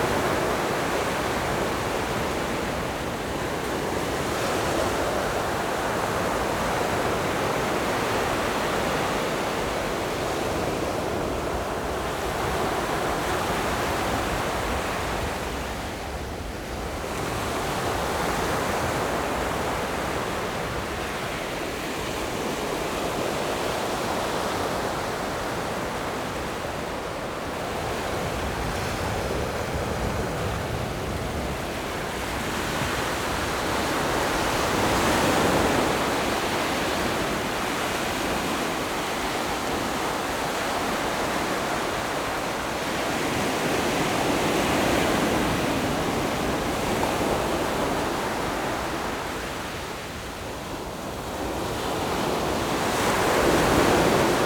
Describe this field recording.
In the beach, Sound of the waves, Zoom H6 MS+ Rode NT4